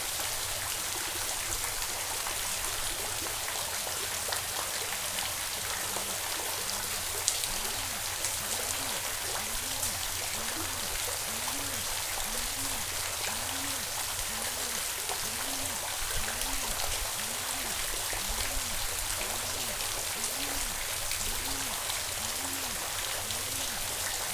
Rd To Fontana Amoroza, Neo Chorio, Cyprus - Aphrodites Bath
What better way to relax after the walk around Aphrodite's Trail with extraordinary views, giant and 500 year old trees and the panorama from Mutti tis Sotiras? A short, immersive (not literally, sadly) moment of listening to the polyphony of watery sounds in the natural fissure and cave that is the bath place of Aphrodite. An extraordinary complex sound scene with drips and flows, close by and resonating along with a distant but very definite conversation between two birds (pigeons?) maybe the souls of Aphrodite and Adonis? I used a pair of Roland binaural mics on either side of the branch of a tree that looked directly into the bathing place to an Olympus (how appropriate) LS5